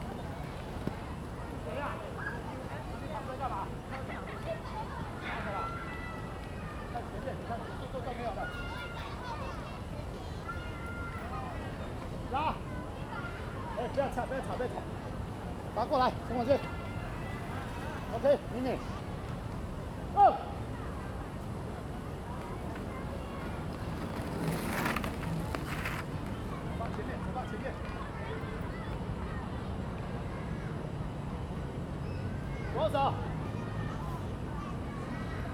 Skates field, Many children are learning skates
Zoom H2n MS+XY